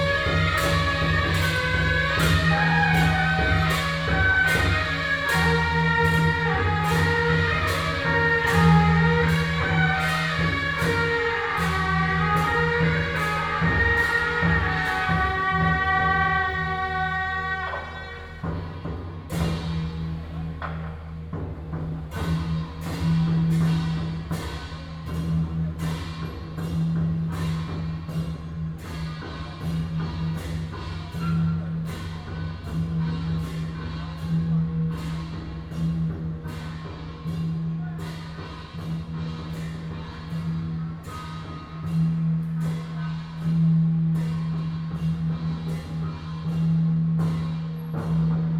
Temple Fair, Parade Formation, firecracker
New Taipei City, Taiwan, 30 May, 18:48